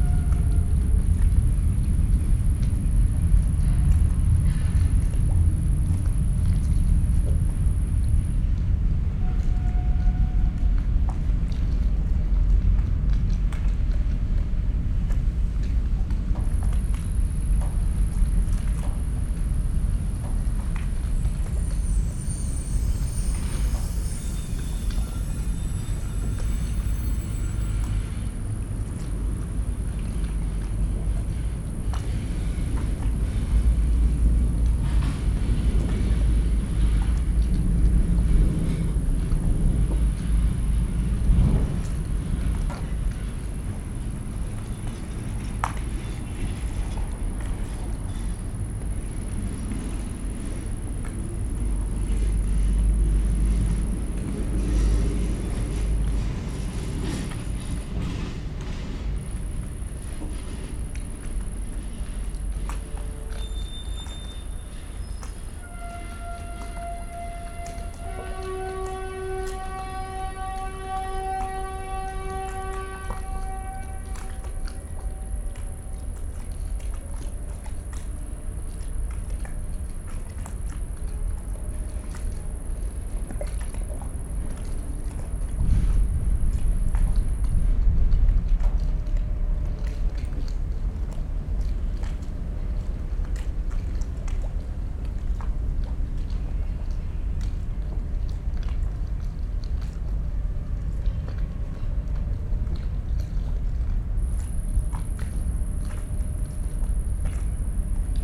Niehler Hafen, Cologne, Germany - harbour, evening ambience
harbour Köln-Niehl, at water level, small waves hitting the body of a ship. a cricket. distant sounds of harbour work. heavy drones of a cargo train passing above me. quiet squeaking from the ship as it moves in the light breeze.
(Sony PCM D50, DPA4060)